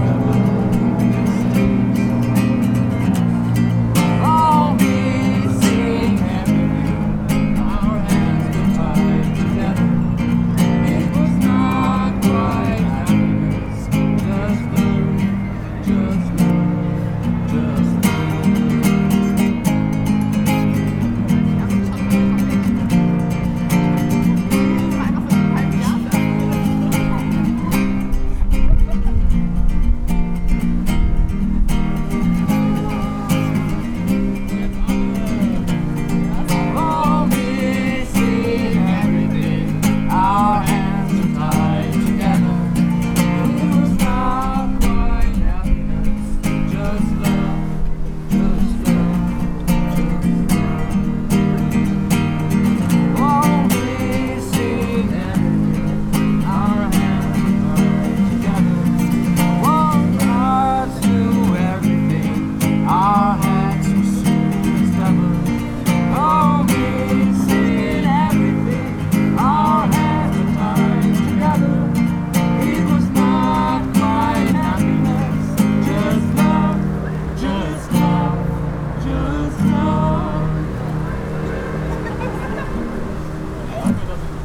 Innsbruck, Waltherpark am Inn Österreich - Frühling im Waltherpark/vogelweide
walther, park, vogel, weide, musik, gitarre, singende menschen, song: Good Old War - Not Quite Happiness, waltherpark, vogelweide, fm vogel, bird lab mapping waltherpark realities experiment III, soundscapes, wiese, parkfeelin, tyrol, austria, anpruggen, st.
Innsbruck, Austria